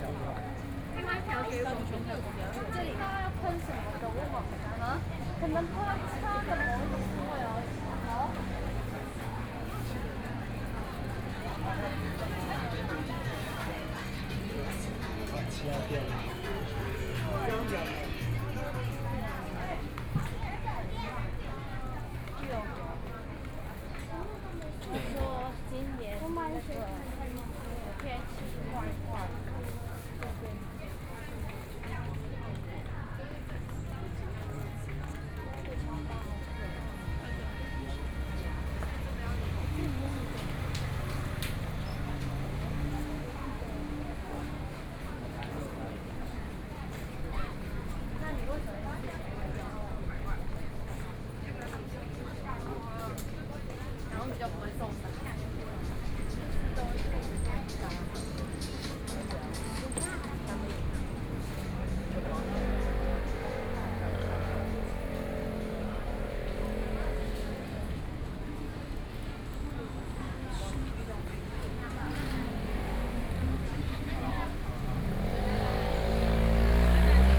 Hemu Rd., Yilan City - the Night Market
Walking through the Night Market, Traffic Sound, Tourist, Various shops voices
Sony PCM D50+ Soundman OKM II